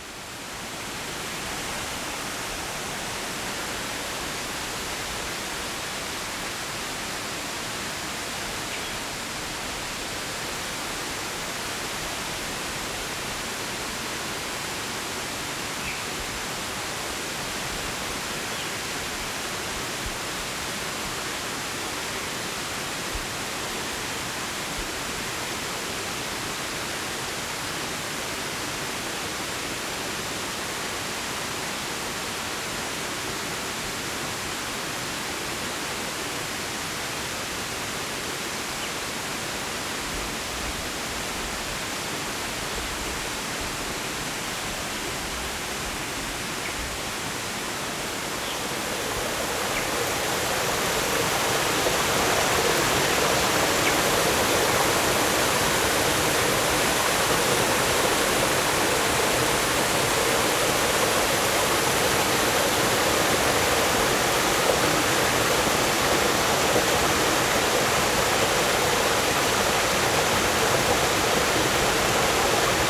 二叭子溪, Xindian Dist., New Taipei City - Stream
Stream and Birds
Zoom H4n + Rode NT4